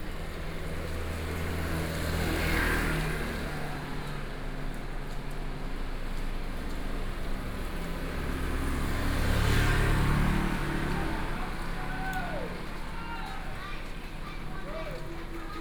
Kangle Rd., Yilan City - Selling vegetables sound
Rainy Day, At the crossroads, There are three vegetable vendors selling their voice, Traffic Noise, Binaural recordings, Zoom H4n+ Soundman OKM II
Yilan City, Yilan County, Taiwan, 5 November 2013